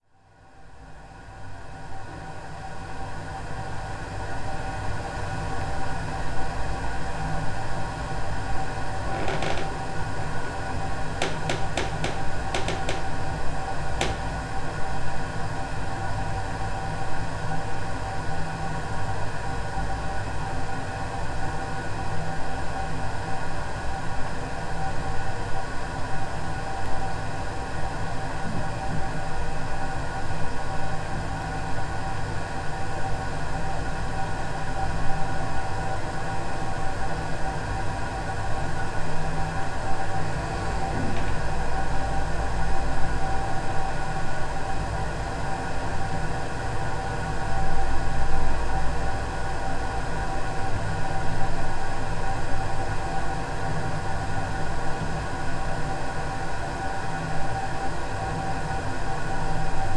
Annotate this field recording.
water flowing in the pipe feeding the radiator. two superlux cardioid mics pushed against the pipe.